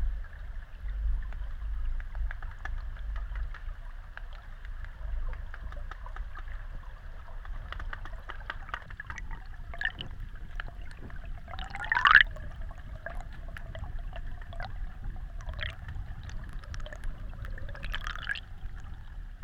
under Glendale Bridge, St. Catharines, ON, Canada - The Twelve | Under Glendale Bridge
The first recording was made with an H2n placed on the ground in the reverberant space under the Glendale Avenue Bridge crossing the Twelve Mile Creek. The site was the west side on a trail maybe 10 meters above creek level (variable because of nearby hydroelectric power generation) and perhaps about the same distance to the underside of the bridge. The second recording is 62 meters away on the pedestrian bridge where I dropped a hydrophone into the water; the current was quite swift. The bridge was built in 1975 replacing a single lane bridge built in 1912 and its story includes local government amalgamation and the rise of shopping centres in North America; the Pen Centre on Glendale Avenue was built in 1958.